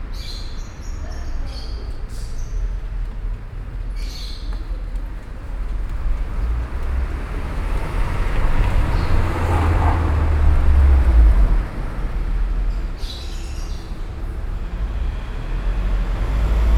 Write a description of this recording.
Brussels, Rue Bosquet, birds in a cage